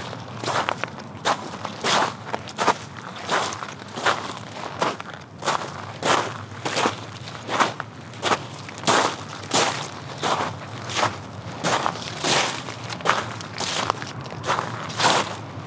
Innenstadt - St. Ulrich-Dom, Augsburg, Germany - Walking on pebbles
7 November 2012